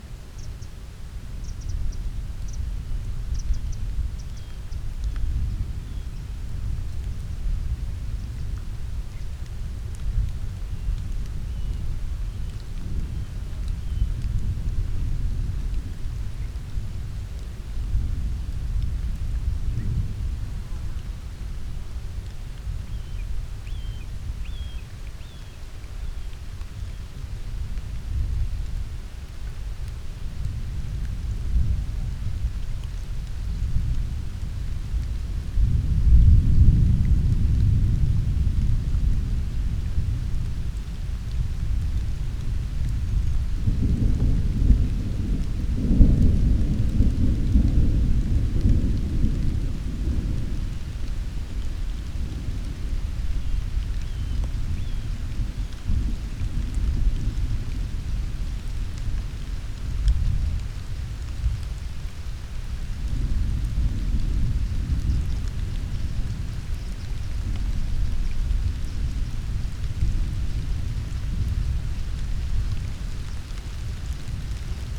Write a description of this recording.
Desde lo alto de una pequeña colina en la encrucijada del sendero hacia Santa Perpetua se siente acercándose una tormenta.